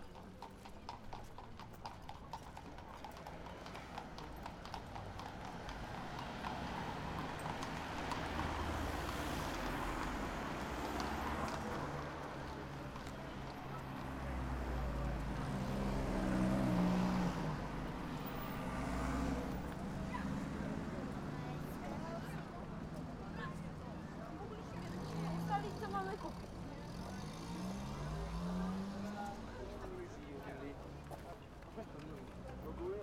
Stare Miasto, Kraków, Poland - Horses in traffic
Sony PCM-D50 handheld, Wide